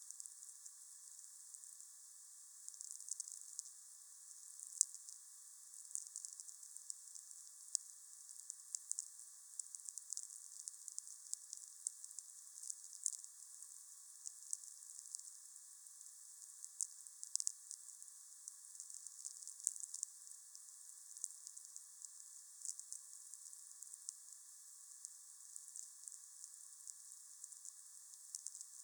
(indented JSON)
{
  "title": "Vyžuonos, Lithuania, listening VLF",
  "date": "2020-03-15 16:20:00",
  "description": "Very Low Frequency or Atmospheric Radio receiving",
  "latitude": "55.60",
  "longitude": "25.49",
  "altitude": "100",
  "timezone": "Europe/Vilnius"
}